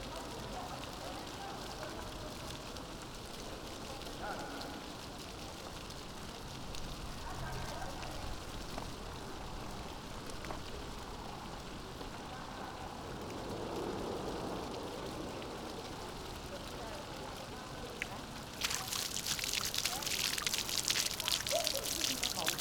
Intermittent fountain at Place Hotel de Ville, Rue de l'Alzette. River Alzette flows under this street of the same name, maybe these fountains are a reminiscense on the hdden river.
(Sony PCM D50)